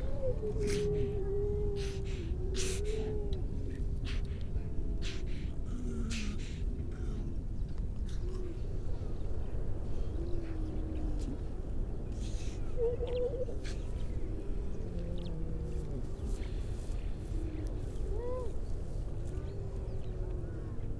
{"title": "Unnamed Road, Louth, UK - grey seals soundscape ...", "date": "2019-12-03 10:37:00", "description": "grey seal soundscape ... mainly females and pups ... parabolic ... bird calls from ... mipit ... skylark ... pied wagtail ... curlew ... crow ... all sorts of background noise ...", "latitude": "53.48", "longitude": "0.15", "altitude": "1", "timezone": "Europe/London"}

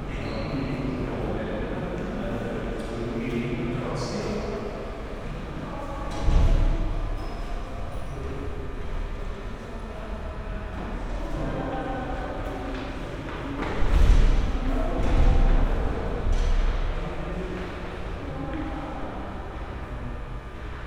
{"title": "berlin, mariendorfer damm: ullsteinhaus - the city, the country & me: entrance hall of the ullstein building", "date": "2013-09-04 11:29:00", "description": "entrance hall, broken lamp, visitors entering or leaving the building\nthe city, the country & me: september 4, 2013", "latitude": "52.45", "longitude": "13.38", "altitude": "46", "timezone": "Europe/Berlin"}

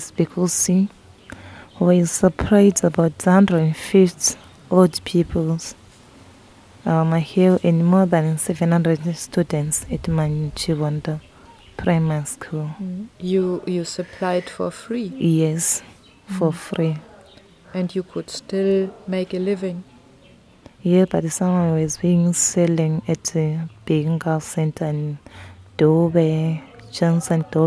{"title": "Zubo Trust, Binga, Zimbabwe - Our successes and challenges", "date": "2016-05-05 13:30:00", "description": "Margaret tells how and why the Baobab Maheo Project is on hold now.\nMargaret is a Community based Facilitator at Manjolo Ward for the Women’s organization Zubo Trust.\nZUBO Trust is a Women’s Organization based in Binga working with and for the rural women of the Zambezi valley in Zimbabwe since 2009. Zubo has become widely recognized for its successful work empowering the rural women in income-generating projects, which utilize the natural resources of the remote area such as Fish, Kapenta, Ilala, Baobab or Moringa. In 2012 Zubo made national headlines by launching the first Women Fishery Project on the Kariba Lake.", "latitude": "-17.61", "longitude": "27.35", "altitude": "625", "timezone": "Africa/Harare"}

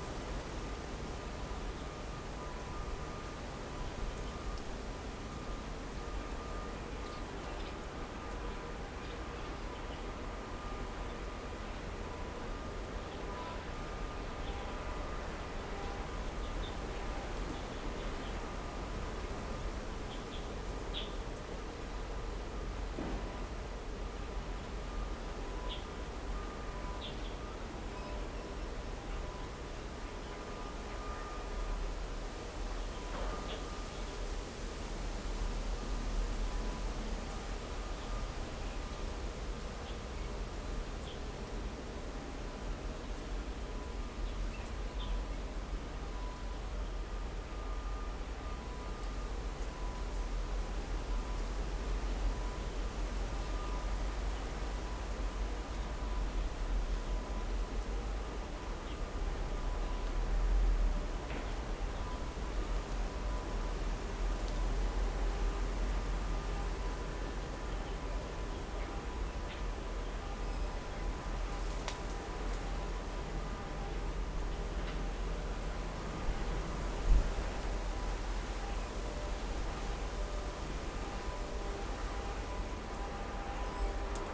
{"title": "Houliao, Fangyuan Township - Under the tree", "date": "2014-01-06 15:52:00", "description": "The sound of the wind, Foot with the sound of leaves, Dogs barking, Birdsong, Distant factory noise, Little Village, Zoom H6", "latitude": "23.92", "longitude": "120.34", "altitude": "8", "timezone": "Asia/Taipei"}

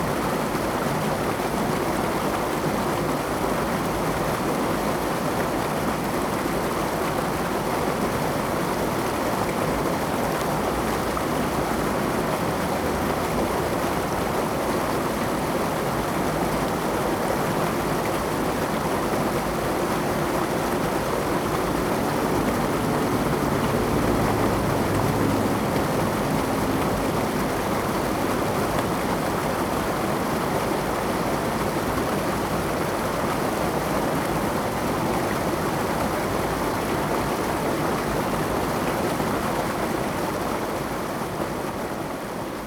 {"title": "吉安溪, Ji'an Township - Streams", "date": "2014-08-28 07:44:00", "description": "Streams of sound, Hot weather\nZoom H2n MS+XY", "latitude": "23.98", "longitude": "121.57", "altitude": "45", "timezone": "Asia/Taipei"}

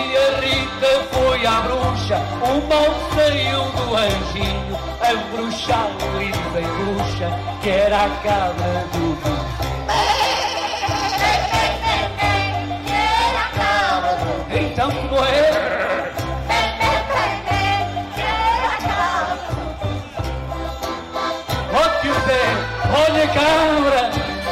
{"title": "2.Albergaria dos Doze, Leiria, Portugal. Folk band family(by A.Mainenti)", "latitude": "39.91", "longitude": "-8.63", "altitude": "76", "timezone": "Europe/Berlin"}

